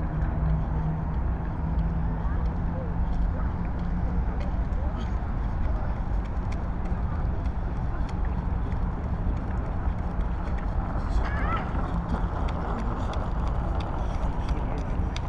Berlin Tempelhof West - city hum south east
microfones turned 180°, more direct noise from the autobahn, also pedestrians, bikes, kites etc.
Berlin, Germany, 13 November, 14:20